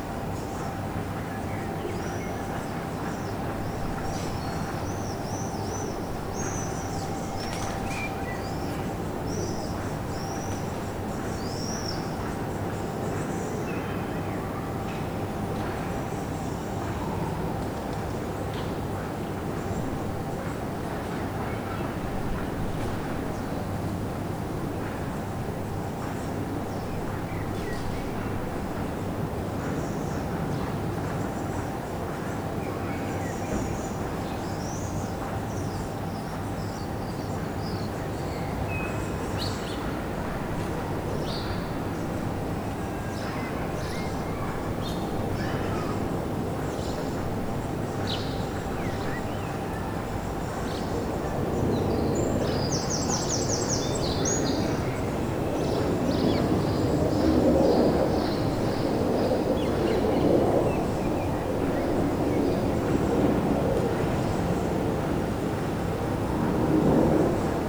Rue de la Légion dHonneur, Saint-Denis, France - Jardin Pierre de Montreuil
This recording is one of a series of recording, mapping the changing soundscape around St Denis (Recorded with the on-board microphones of a Tascam DR-40).